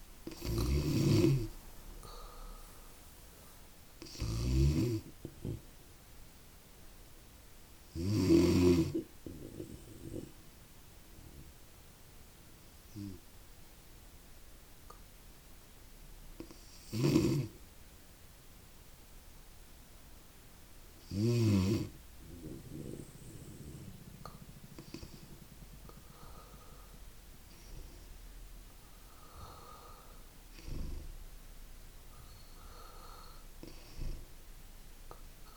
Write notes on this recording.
inside my grand mas sleeping room at her death bed, listening to the breathing in the night of her final farewell. soundmap nrw - social ambiences and topographic field recordings